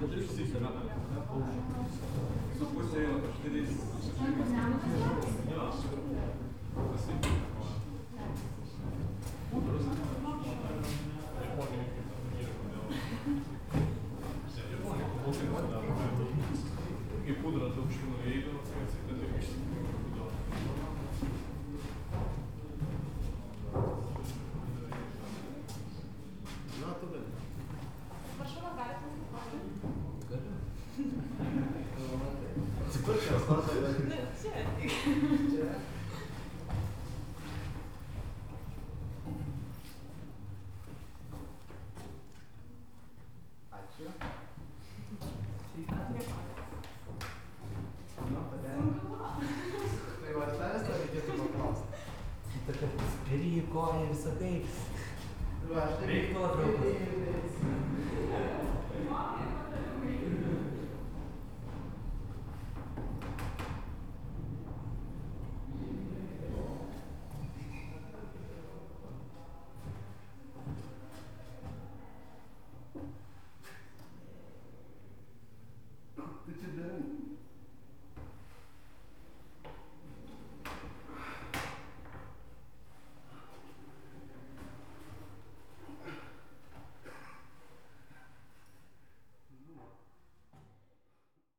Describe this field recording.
a noisy crowd in the museum of Raudondvaris castle tower